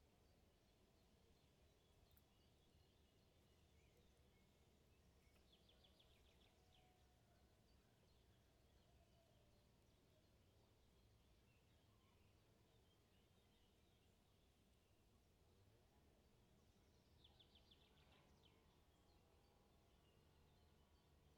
вулиця Зарічна, Вінниця, Вінницька область, Україна - Alley12,7sound1nature
Ukraine / Vinnytsia / project Alley 12,7 / sound #1 / nature
27 June